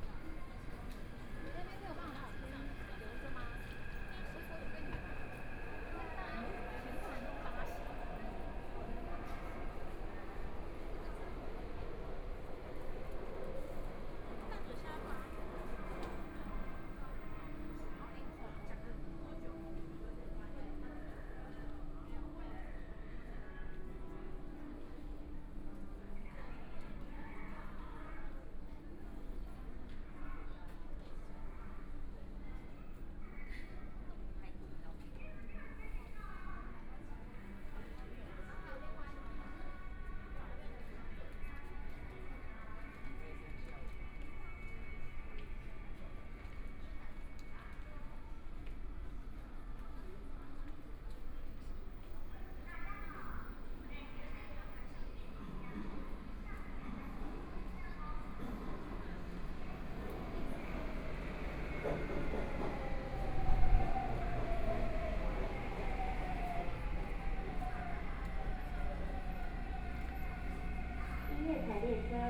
Taipei, Taiwan - Walking in the underground mall
Walking in the underground mall, Direction to MRT station, Clammy cloudy, Binaural recordings, Zoom H4n+ Soundman OKM II